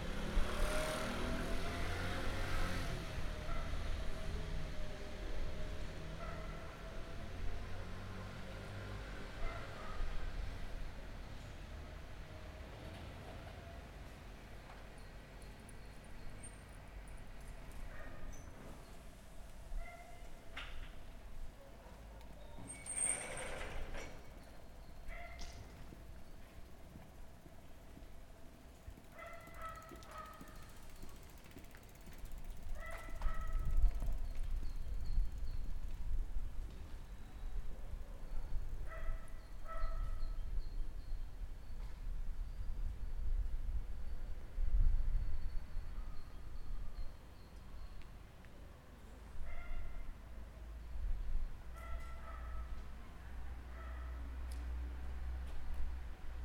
Pl. Ioniou Voulis, Napoleontos Zampeli, Corfu, Greece - Ioniou Voulis Square - Πλατεία Ιονίου Βουλής
Motorbikes and cars passing by. Then a slow moving bicycle. The square is surrounded by 3 streets, Napoleontos Zampeli, Ilia Politi and Moustoxidi street.